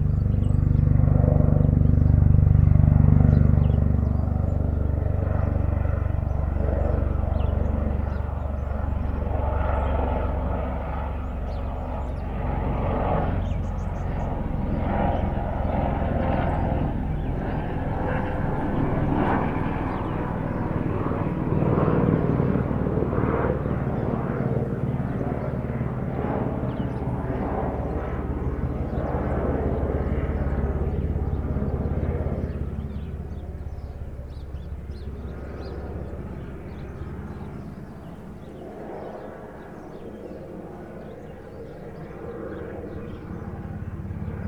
{"title": "Rue Leconte De Lisle, Réunion - 20200217 104728-105854 CILAOS, tourisme par hélicoptère", "date": "2020-02-17 10:47:00", "description": "3 TYPES D'HÉLICOS DIFFÉRENTS À LA SUITE CILAOS, ÎLE DE LA RÉUNION.", "latitude": "-21.14", "longitude": "55.47", "altitude": "1182", "timezone": "Indian/Reunion"}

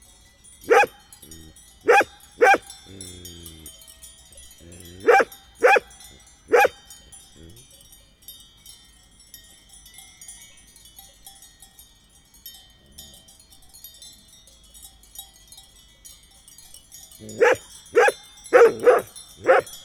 {"title": "Chem. de la Plaine, Chamonix-Mont-Blanc, France - Chamonix", "date": "2015-10-31 15:00:00", "description": "Chamonix\nAmbiance de montagne - panure", "latitude": "45.94", "longitude": "6.90", "altitude": "1083", "timezone": "Europe/Paris"}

